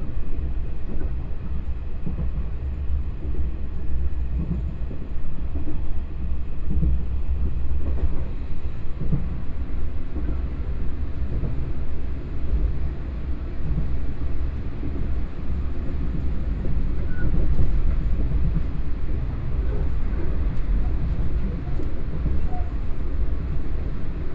Shulin District - Chu-Kuang Express
from Yingge Station to Shulin Station, Zoom H4n + Soundman OKM II
11 September 2013, 12:54